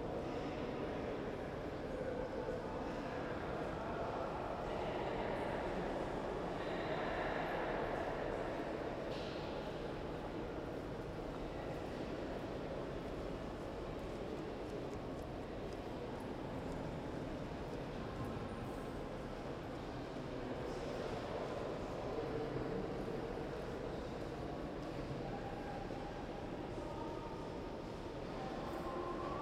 Zürich, ETH, Schweiz - Raumklang, Treppenhaus
Zürich, Switzerland, 8 June 2002, ~13:00